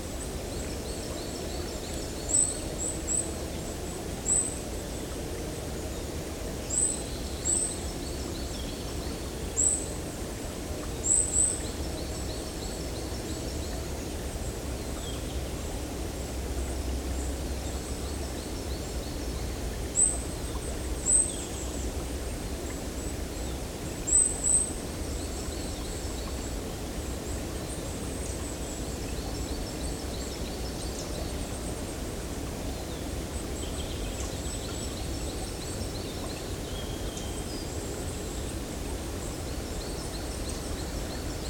{
  "title": "Unnamed Road, Champsecret, France - Quiet Andaine forest",
  "date": "2020-10-13 18:30:00",
  "description": "Peaceful place into the heart of the forest.\nORTF\nDR 100 MK3\nLOM Usi Pro.",
  "latitude": "48.60",
  "longitude": "-0.51",
  "altitude": "246",
  "timezone": "Europe/Paris"
}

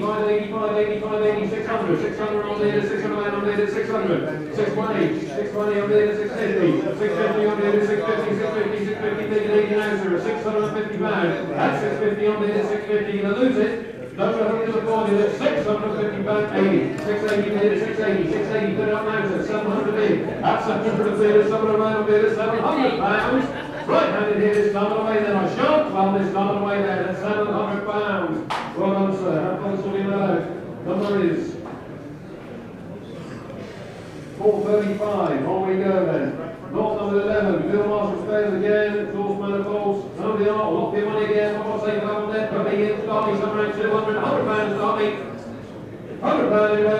{
  "title": "Thwing, UK - the auctioneer ...",
  "date": "2016-06-25 10:30:00",
  "description": "Auctioneer at Farm machinery and Tractor sale ... auctioneer has headset mic ... his assistant carries a small amplifier ... voices ... recorded with lavalier mics clipped to baseball cap ...",
  "latitude": "54.11",
  "longitude": "-0.42",
  "altitude": "107",
  "timezone": "Europe/London"
}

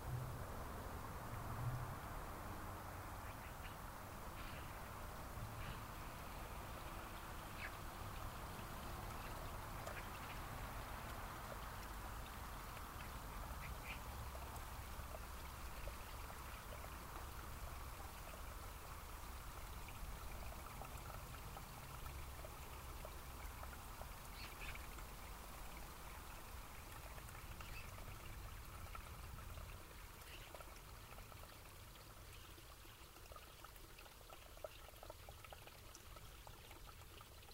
{
  "title": "Symondsbury, Dorset, UK - underpass",
  "date": "2013-07-18 13:00:00",
  "description": "'soundwalk' between Bridport and West Bay. Binaural recordings of underpass traffic and gates.",
  "latitude": "50.72",
  "longitude": "-2.76",
  "altitude": "3",
  "timezone": "Europe/London"
}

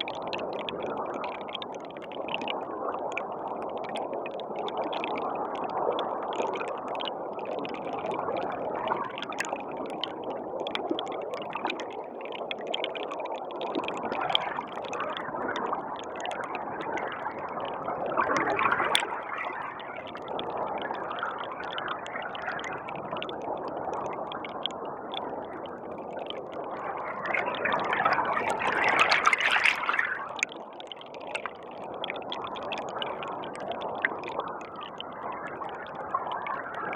{
  "title": "nám. Dr. Václava Holého, Praha, Česko - Stream 02",
  "date": "2019-05-17 10:50:00",
  "description": "Hydrophone recording of the Rokytka river. The recording became a part of the sound installation \"Stream\" at the festival M3 - Art in Space in Prague, 2019",
  "latitude": "50.11",
  "longitude": "14.47",
  "altitude": "186",
  "timezone": "GMT+1"
}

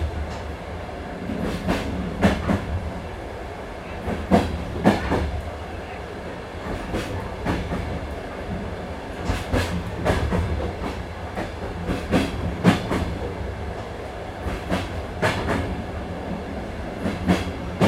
{
  "title": "tambour train, Severodvinsk, Russia - tambour train",
  "date": "2012-04-17 18:41:00",
  "description": "Tambour train.\nRecorded on Zoom H4n.\nЗапись сделана в тамбуре поезда Северодвинск - Ненокса, во время движения поезда.",
  "latitude": "64.58",
  "longitude": "39.44",
  "altitude": "1",
  "timezone": "Europe/Moscow"
}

